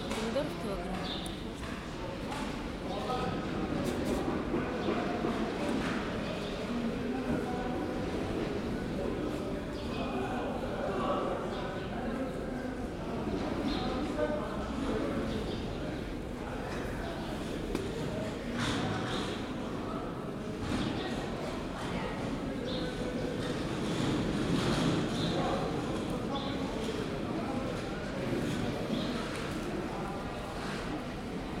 Кировоградская ул., влад, Москва, Россия - Buying vegetables at a farmers market
Buying vegetables at a farmers' market near the Prazhskaya metro station, Moscow. Other customers and sellers can also be heard as well as carts carrying goods.
Центральный федеральный округ, Россия, March 2020